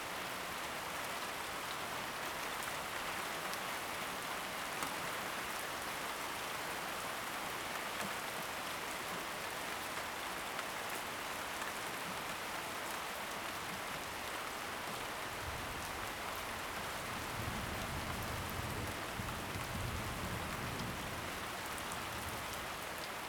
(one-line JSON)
{"title": "Ascolto il tuo cuore, città. I listen to your heart, city. Several chapters **SCROLL DOWN FOR ALL RECORDINGS** - Night on terrace storm under umbrella", "date": "2020-08-24 02:17:00", "description": "\"Night on terrace storm under umbrella\" Soundscape\nChapter VXXVII of Ascolto il tuo cuore, città, I listen to your heart, city\nMonday, August 24stth 2020. Fixed position on an internal terrace at San Salvario district Turin, five months and fourteen days after the first soundwalk (March 10th) during the night of closure by the law of all the public places due to the epidemic of COVID19.\nStart at 02:17 a.m. end at 02:36 a.m. duration of recording 18'57''.", "latitude": "45.06", "longitude": "7.69", "altitude": "245", "timezone": "Europe/Rome"}